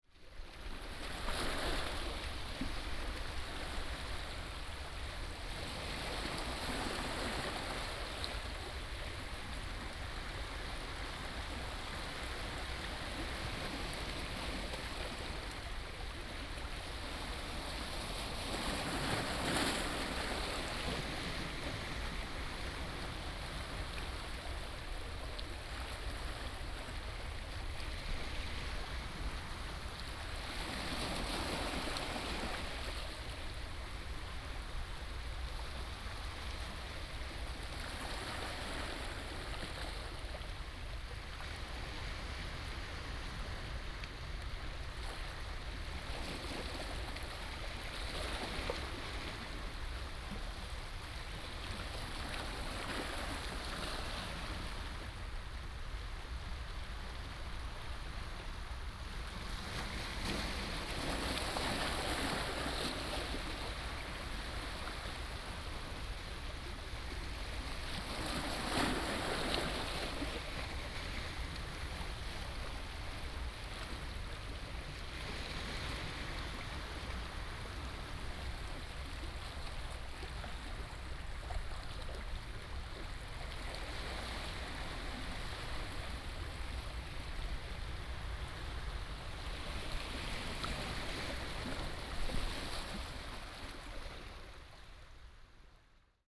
On the coast, The sound of the waves